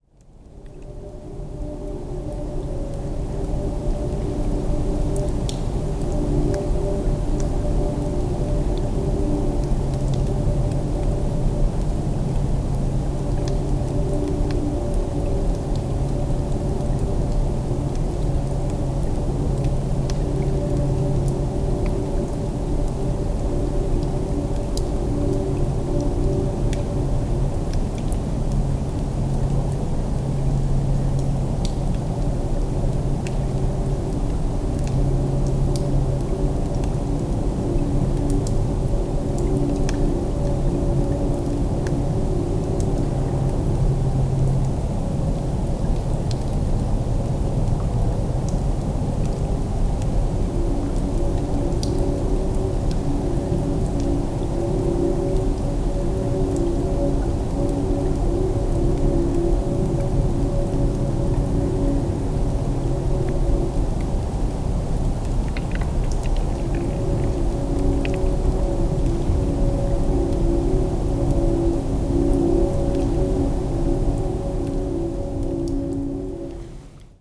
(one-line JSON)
{"title": "Warren Woods State Park, Red Arrow Highway, Sawyer, MI, USA - Train Drops", "date": "2015-02-07 15:16:00", "description": "Droplets from the partially unfrozen surface of the Galien River and low train calls in the distance.", "latitude": "41.84", "longitude": "-86.62", "altitude": "195", "timezone": "America/Detroit"}